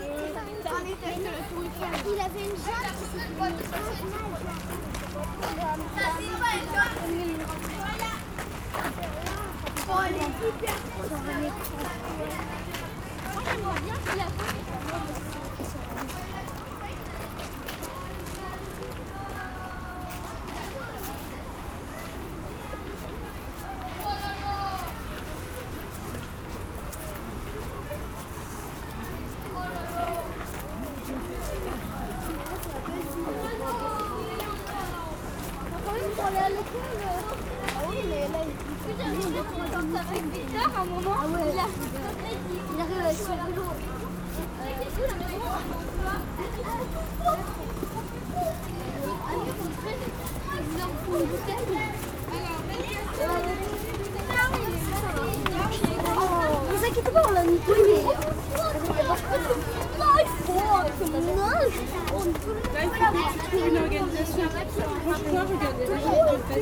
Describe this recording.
The "Musée de Sèvres" station. A group of children is climbing the stairs. A train is arriving, people is going inside and the train leaves.